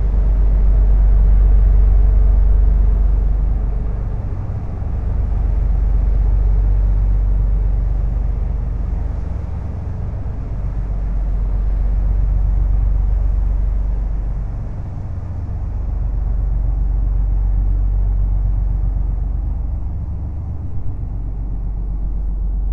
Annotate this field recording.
We are lost, and found this completely impossible to access place. The paths are completely closed with brambles and swamps. Here, we make a break. A big boat is passing by quicly on the Seine river, this makes big waves.